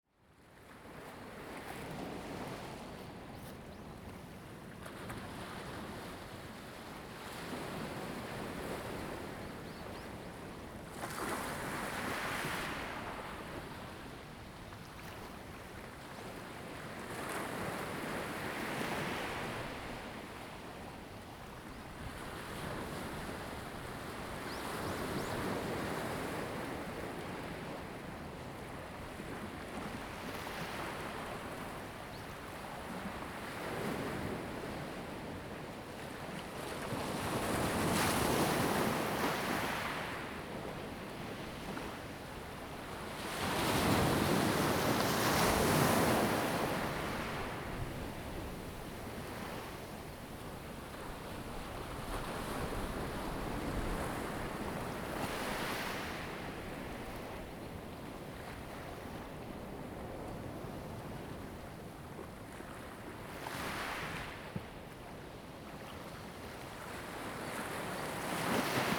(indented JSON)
{
  "title": "Lanyu Township, Taitung County - In the dock",
  "date": "2014-10-29 15:46:00",
  "description": "In the dock, Waves and tides\nZoom H2n MS +XY",
  "latitude": "22.00",
  "longitude": "121.58",
  "altitude": "10",
  "timezone": "Asia/Taipei"
}